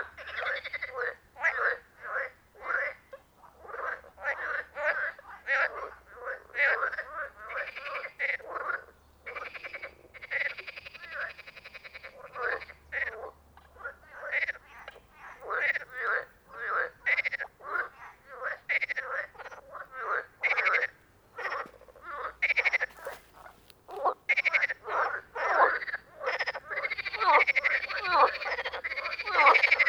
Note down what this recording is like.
A great Sunday. It is warm. Aydos Forest road again I’m falling. After a 30 minute walk down the road to the lake I’m having with this great landscape. On Sunday everyone for being here for a picnic or a walk. While touring around the lake, frogs, ducks and geese I hear. I press my dick set the record just audio recording. Enjoyable listening.